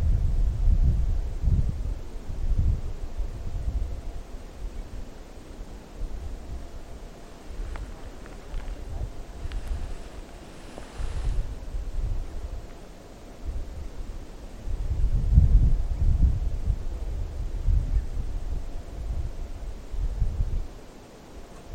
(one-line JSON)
{"title": "Anochecer en el mirador de Amantaní.", "date": "2010-07-08 19:28:00", "latitude": "-15.67", "longitude": "-69.71", "altitude": "4055", "timezone": "America/Lima"}